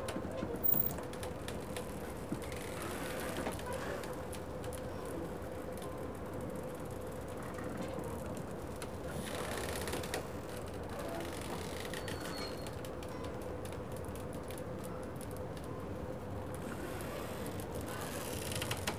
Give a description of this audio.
Boats pulling at their moorings, squeaking, creaking, and squealing.